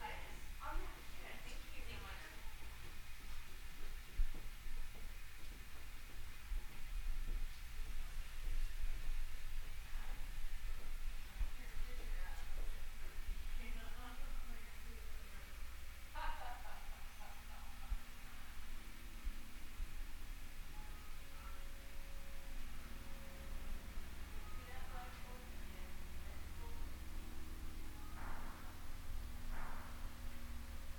Ave. S, Seattle, WA, USA - City Plan Echoes (Underground Tour 3)
Lower level of the Maynard Building. The Yesler "jog" explanation. Footsteps, movement within business above and vehicle sounds from above. "Bill Speidel's Underground Tour" with tour guide Patti A. Stereo mic (Audio-Technica, AT-822), recorded via Sony MD (MZ-NF810).